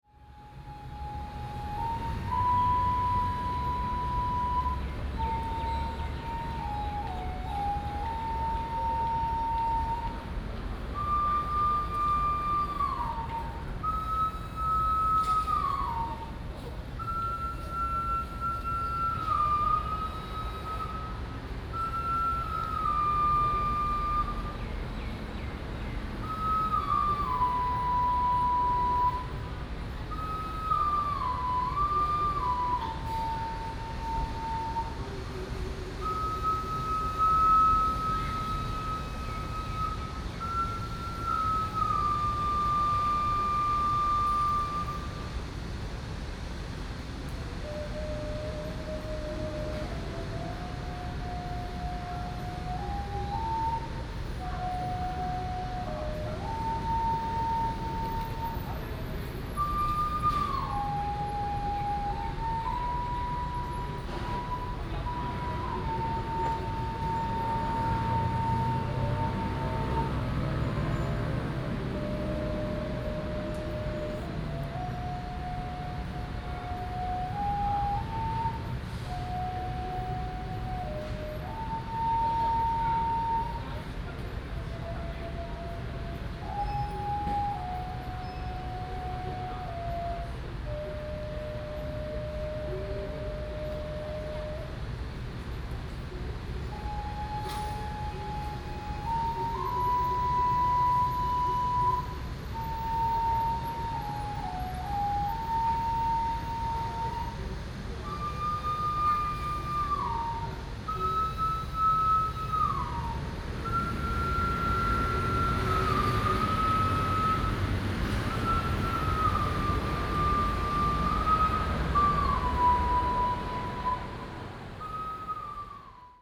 Yilan Station, Yilan City - In the square
In the square, in front of the station, Very hot weather, Traffic Sound